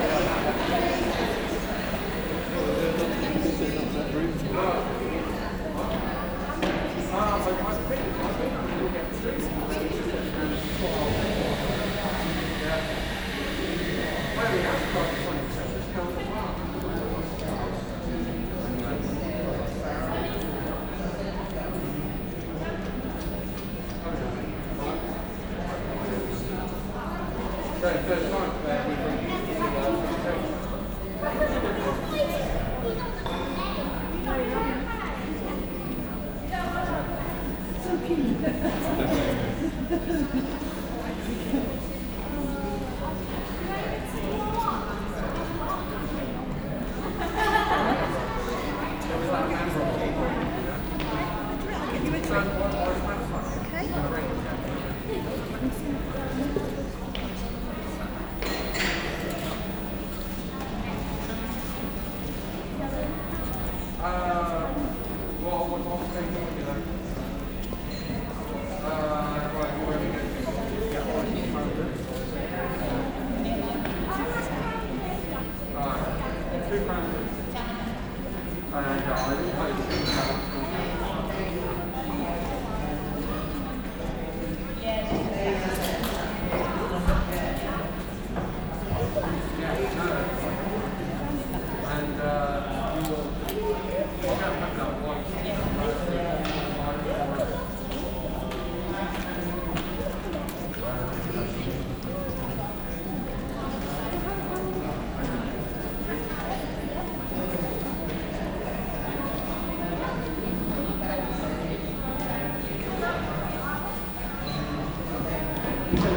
A trial of the now discontinued Sennheiser Ambeo Smart Headsets. I acquired these on Amazon for a very low price. They are not great. They have an intermittent crackle on the right channel and all the features except record are missing on my iphone 6s. For dynamic omnis the mics are not bad. Listen with headphones and see what you think.